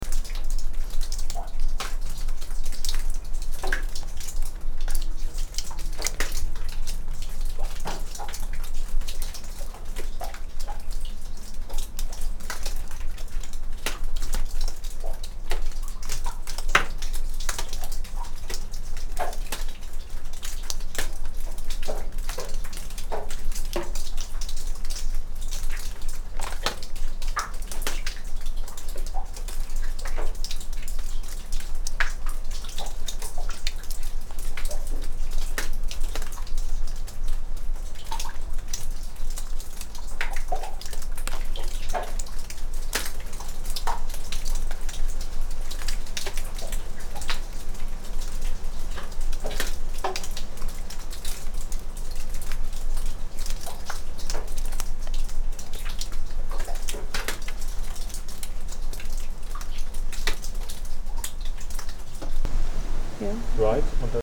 Zagorz, ruin of Carmelitan monastery
rain drops inside a small cave, dripping on different materials like wood, sand, stones, paper, plastic bags